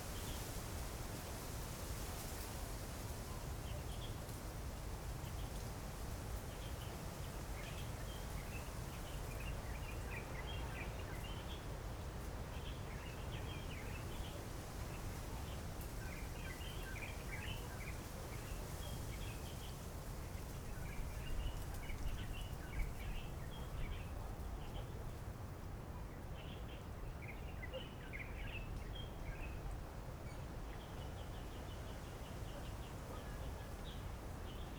Changhua County, Taiwan - At the beach
The sound of the wind, Cold weather, Birds sound, Windy, Zoom H6